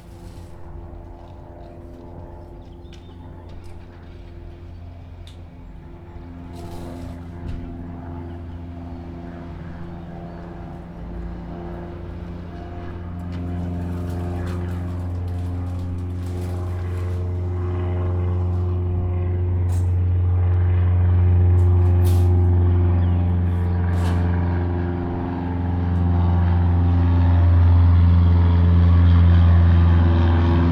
neoscenes: morning work and tea
Reykjavik, Iceland